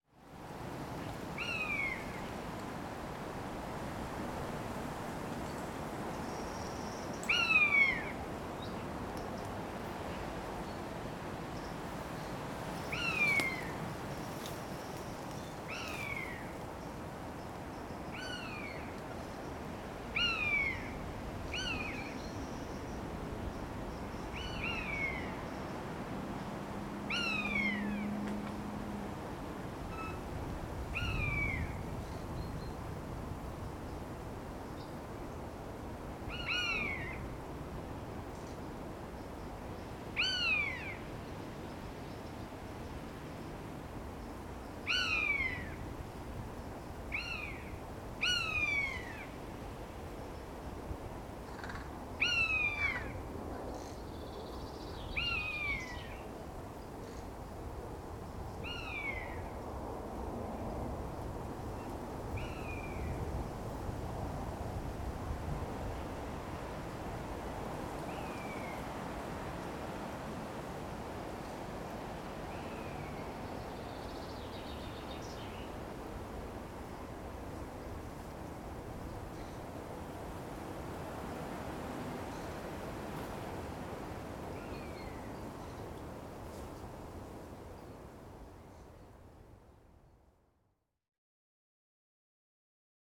Greenwood, Mount Desert, Lee Road, Co. Cork, Ireland - Buzzards
I went exploring in the woods yesterday evening and came across this pair of Buzzards. I had to get my recorder out quickly as they called to each other above the canopy. I've seen these birds out this direction before. It's nice to see them living so close to the city. It was a very windy evening and you can hear it in the trees, and the little bit of wind noise in the mics. Recorder on a Roland-R07, internal mics. Lightly edited: low cut to reduce wind noise, and stereo width added.
Munster, Éire / Ireland, 4 May, 19:30